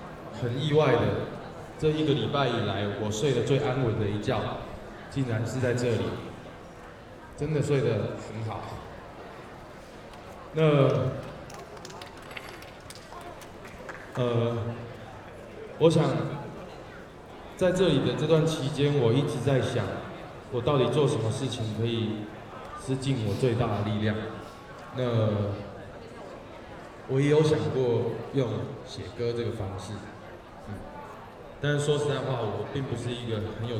{
  "title": "立法院, Taiwan - the student activism",
  "date": "2014-03-27 19:34:00",
  "description": "Student activism, Rock Band songs for the student activism, Students and the public to participate live recordings, People and students occupied the Legislative Yuan\nZoom H6+ Rode NT4",
  "latitude": "25.04",
  "longitude": "121.52",
  "altitude": "11",
  "timezone": "Asia/Taipei"
}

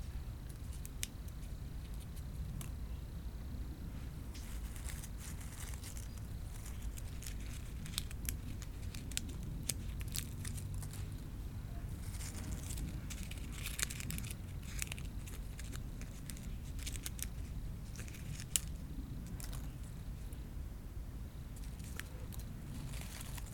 {
  "title": "Jardin Vagabond, rue Jules Pin, Aix-les-Bains, France - Sous le savonnier",
  "date": "2022-09-13 11:30:00",
  "description": "Collecte de graines accroupi sous le savonnier du Jardin Vagabond, quelques insectes dans la haie, oiseaux discrets de passage, une travailleuse du jardin circule avec sa brouette, la conversation s'engage. bouscarle au loin. Beaucoup de moustiques je m'en tire avec une dizaine de piqures et quelques morts par claque!",
  "latitude": "45.71",
  "longitude": "5.89",
  "altitude": "235",
  "timezone": "Europe/Paris"
}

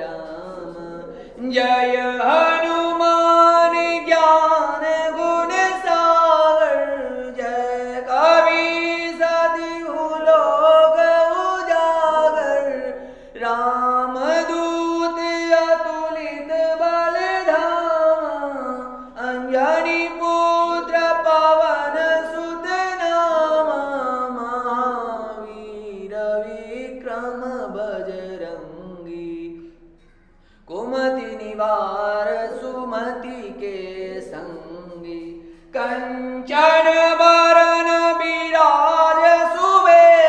{
  "title": "Jaisalmer, Gadisar lake temple",
  "date": "2010-12-09 14:45:00",
  "description": "gadisar lake temple sur le tournage de RANI",
  "latitude": "26.91",
  "longitude": "70.92",
  "altitude": "229",
  "timezone": "Asia/Kolkata"
}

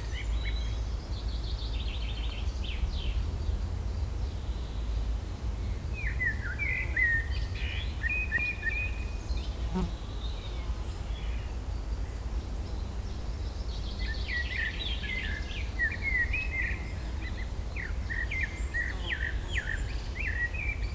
{
  "title": "Terschelling, Hoorn - Terschelling, Hoorn (Eendekooi / Duck cage)",
  "date": "2021-07-04 14:55:00",
  "latitude": "53.40",
  "longitude": "5.36",
  "timezone": "Europe/Amsterdam"
}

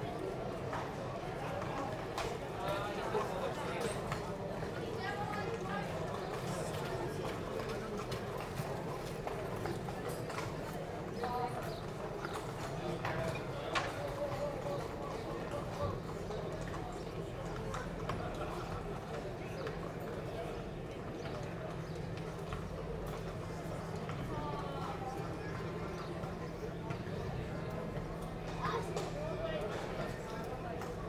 {
  "title": "Hawick, Scottish Borders, UK - Hawick Common Riding - mounted horse procession",
  "date": "2013-06-07 08:30:00",
  "description": "This is a part of the Hawick Common Riding Festival in which a giant horse procession around the town takes place. In Hawick, they take horse poo very seriously indeed, and in fact immediately after the horses have passed, a massive sweeping machine enters the town to tidy away all the dung IMMEDIATELY.\nRecorded with Naiant X-X microphones and Fostex FR-2LE, microphones held at around horse ankle level, about 5m away from the actual horses.",
  "latitude": "55.42",
  "longitude": "-2.79",
  "altitude": "111",
  "timezone": "Europe/London"
}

